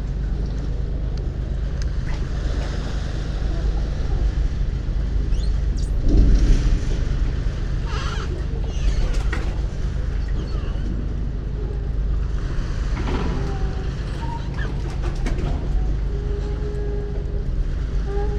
La Palma, Spanien - Harbor sing sang
The harbor in Santa Cruz de La Palma performs a kind of Sing Sang.
A mix created by the wind, the waves pushing into the sheltered harbor basin, the pontoons that are always slightly in motion, and the running engines of the just loading large ferries.
Canarias, España, 15 April 2022